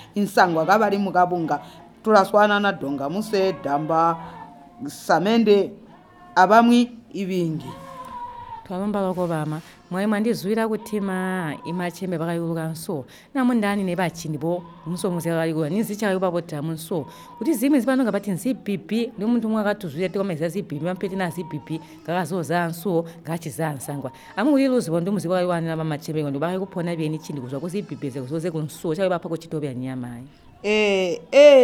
Sikalenge, Binga, Zimbabwe - We are basket weavers in Sikalenge...
Elina Muleya belongs to a group of basket weavers in Sikalenge Ward. Elina tells how the group was formed. She talks about the challenge of getting Ilala leaves for weaving. The palm tree doesn’t grow well in their area. The women have to walk far, in to the neighboring Ward, Simatelele, to find the leaves. Elina describes how the Ilala leaves are cut and prepared for the weaving and about some of the common patterns the women are weaving in to the baskets. It’s a knowledge that mainly the elder women are still having and cultivating. Achievements of the group include that the women are now owning live-stock, chickens and goats; their goal is to have a garden together at the Zambezi (Kariba Lake), grow tomato and vegetable and sell them. A challenge for the group of weavers is the small market in their area, even though they also sell a bit via the Binga Craft Centre.
20 July 2016, ~17:00